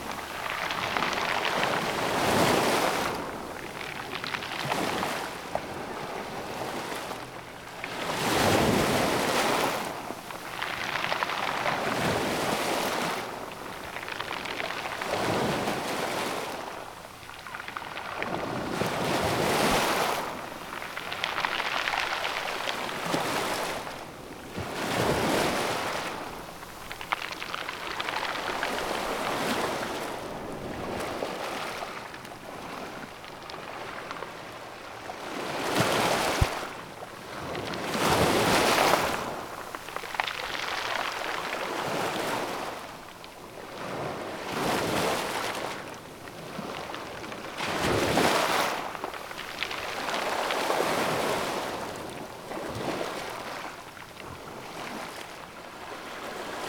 the beach in Corniglia is made of round stones in an average size of a tennis ball. all stones are smoothly shaped by the waves. grainy sound of pebbles rolling in the waves.
Vernazza, La Spezia, Italy, 6 September 2014